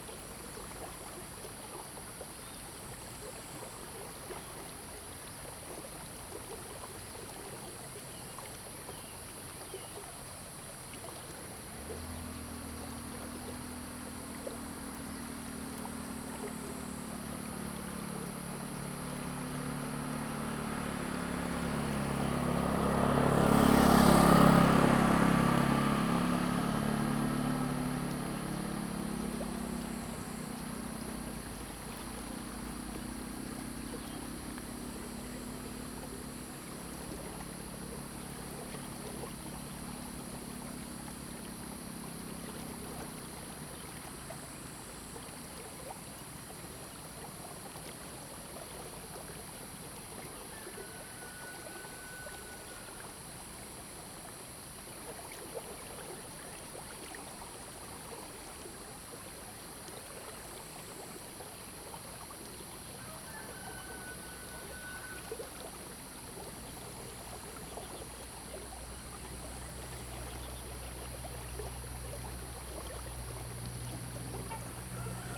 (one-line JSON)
{"title": "Taomi River, Puli Township, Taiwan - sound of water streams", "date": "2015-08-12 06:06:00", "description": "Early morning, Bird calls, sound of water streams, Crowing sounds\nZoom H2n MS+XY", "latitude": "23.94", "longitude": "120.94", "altitude": "452", "timezone": "Asia/Taipei"}